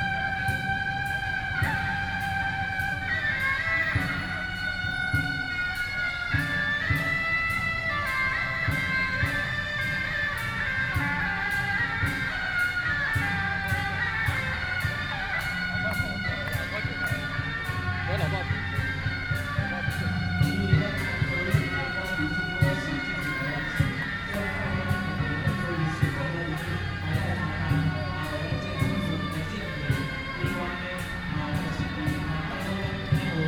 National Taiwan Museum, Taipei - temple festivals
Traditional temple festivals, Through a variety of traditional performing teams, Gods into the ceremony venue, Binaural recordings, Zoom H6+ Soundman OKM II
Zhongzheng District, 博物館